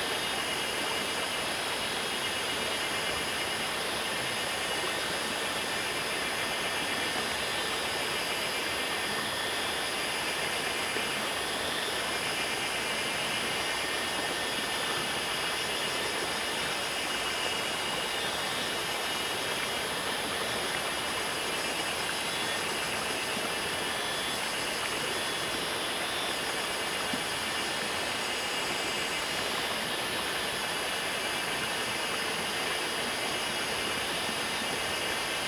種瓜坑, 埔里鎮成功里 - Cicada and stream sounds
Cicada and stream sounds
Zoom H2n MS+XY
Puli Township, Nantou County, Taiwan, 18 May 2016